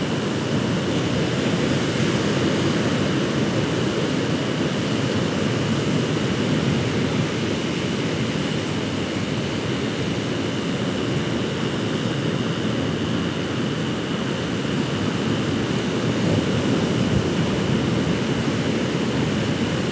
{"title": "Rosedale Beach, NSW, Australia - Rosedale Beach Waves", "date": "2014-12-31 19:30:00", "latitude": "-35.81", "longitude": "150.23", "altitude": "6", "timezone": "Australia/Sydney"}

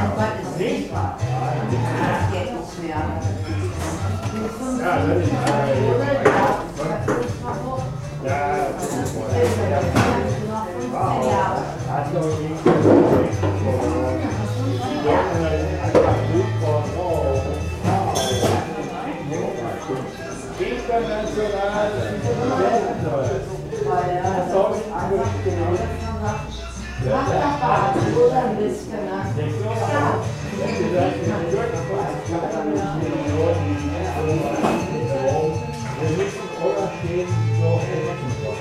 {"title": "Rüttenscheid, Essen, Deutschland - ampütte", "date": "2010-05-17 22:42:00", "description": "ampütte, rüttenscheider str. 42, 45128 essen", "latitude": "51.44", "longitude": "7.01", "altitude": "116", "timezone": "Europe/Berlin"}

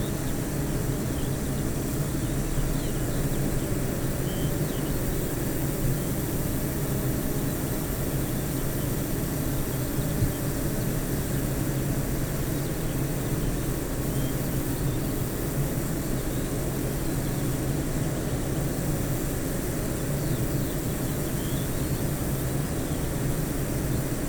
{"title": "Green Ln, Malton, UK - bee hives ...", "date": "2020-06-25 05:00:00", "description": "bee hives ... eight bee hives in pairs ... dpa 4060s to Zoom F6 clipped to a bag ... bird call song ... skylark ... corn bunting ...", "latitude": "54.13", "longitude": "-0.56", "altitude": "105", "timezone": "Europe/London"}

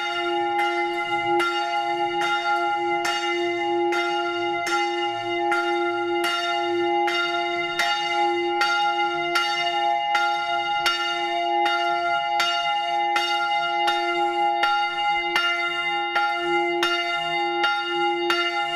{"title": "Grenoble, France - the bell in the street", "date": "2016-02-01 19:00:00", "description": "Vespers is ringing at St André’s church, but, due to the process of restoration of its bell tower, the bell itself and its structure has been set in the small public passage behind the church.\nThis allows a very near recording, to less than 1 meter, so that one can hear, by the end, the mechanism of the clockwork.", "latitude": "45.19", "longitude": "5.73", "altitude": "220", "timezone": "Europe/Paris"}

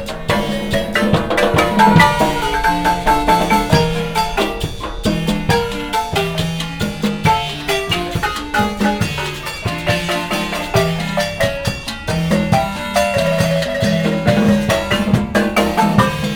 {"title": "Eje Vial Eje 2 Pte. (Avenida Monterrey), Roma Sur, Cuauhtémoc, Cdad. de México, CDMX, Mexiko - street music at market", "date": "2016-04-28 14:40:00", "description": "Approaching 3 beautiful musicians at a market in Roma Sur.", "latitude": "19.41", "longitude": "-99.16", "altitude": "2240", "timezone": "America/Mexico_City"}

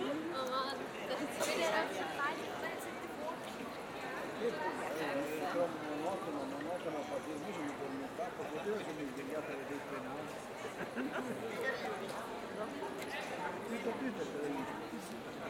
{"title": "Aarau, Maienzug, People waiting, Schweiz - Maienzug Erwartung", "date": "2016-07-01 08:05:00", "description": "Half an hour before the Maienzug passes by - a march of children between 5 to 18, accompanied by teachers, educators and brass bands - already a brass band is playing and people are chatting in the Rathausgasse.", "latitude": "47.39", "longitude": "8.04", "altitude": "385", "timezone": "Europe/Zurich"}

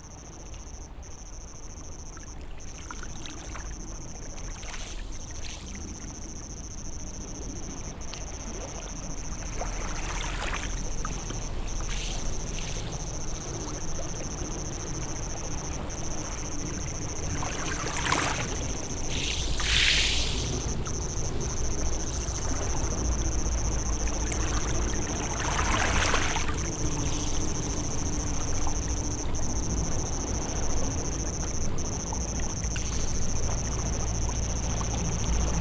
{"title": "Bd Stephanopoli de Comene, Ajaccio, France - les Sanguinaires Plage Corse", "date": "2022-07-26 21:00:00", "description": "Wave Sound\nCaptation : ZOOM H6", "latitude": "41.91", "longitude": "8.70", "timezone": "Europe/Paris"}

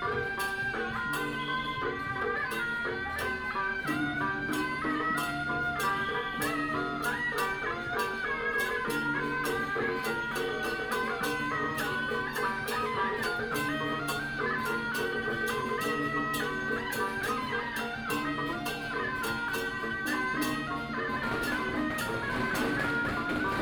{"title": "Chenghuangtempel van Taiwansheng, Taipei - Traditional Festivals", "date": "2013-11-16 10:50:00", "description": "Nanguan, Traditional Festivals, Through a variety of traditional performing teams, Binaural recordings, Zoom H6+ Soundman OKM II", "latitude": "25.04", "longitude": "121.51", "altitude": "21", "timezone": "Asia/Taipei"}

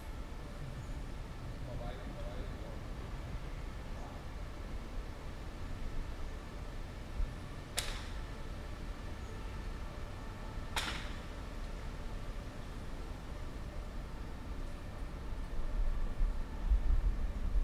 {"title": "Köln, Deutschland - Garten der Religionen / Garden Of Religions", "date": "2014-07-21 12:30:00", "description": "Aus dem Garten sind die Geräusche der umgebenden Straßen zu hören, ein Krankenwagen, Autos. In einem Gebäude am Rand des Gartens arbeiten Handwerker.\nFrom the garden the sounds of the surrounding streets are heard, an ambulance, cars. In a building at the edge of the garden working craftsmen.", "latitude": "50.92", "longitude": "6.94", "altitude": "53", "timezone": "Europe/Berlin"}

